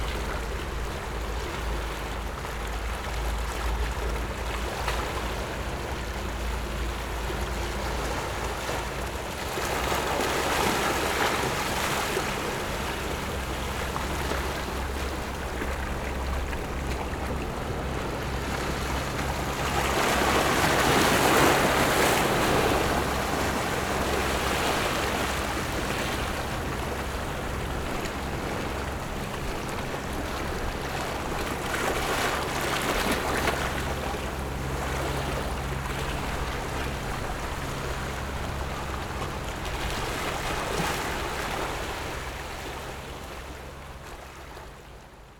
大窟澳, Gongliao District - Rocks and waves
Rocks and waves, Very hot weather
Zoom H6 Ms+ Rode NT4